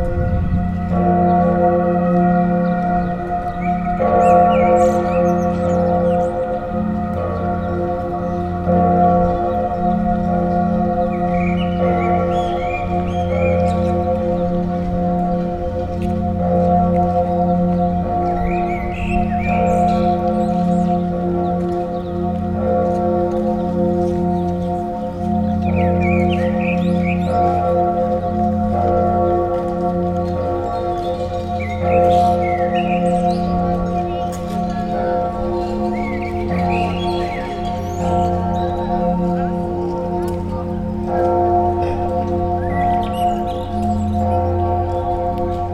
Hamburg, Deutschland - Sankt Michaelis glocke
Hauptkirche St. Michaelis. The very good and pleasant bell of this Lutheran church, ringing at 10AM. Into the park, song of a blackbird and pedestrians walking onto the gravels.